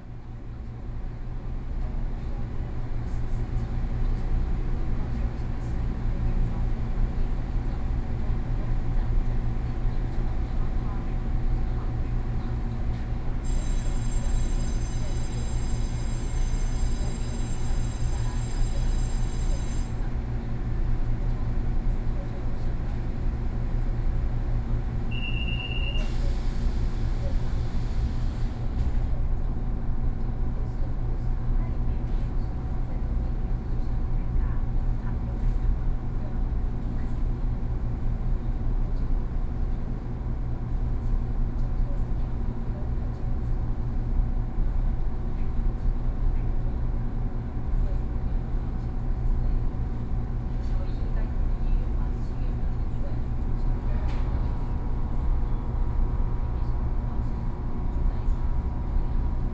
Taoyuan - In the compartment
from Yangmei Station to Fugang Station, Sony PCM D50+ Soundman OKM II
Taoyuan County, Taiwan